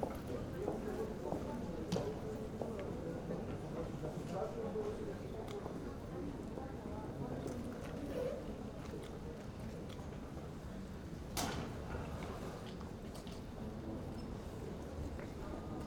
airport Luxembourg (LUX) - early morning hall ambience
murmur of voices, steps and a security announcement, airport Luxembourg, early morning hall ambience
(Sony PCM D50)
Luxembourg Airport (LUX), Niederanven, Luxembourg